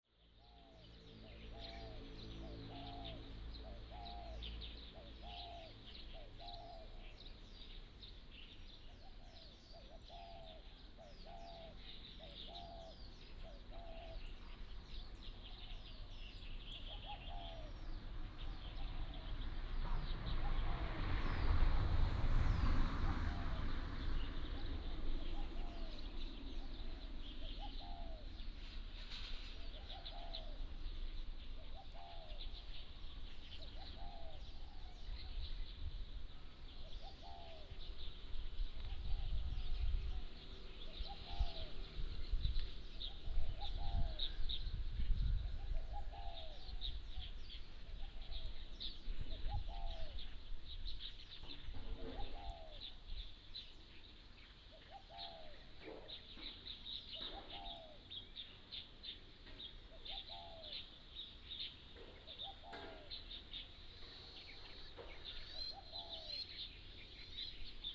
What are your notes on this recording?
Birds singing, Traffic Sound, Small village